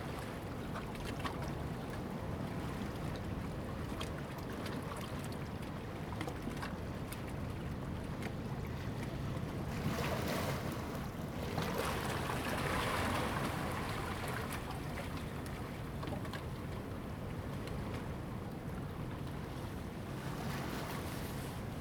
Jizazalay, Ponso no Tao - Waves and tides
Waves and tides
Zoom H2n MS +XY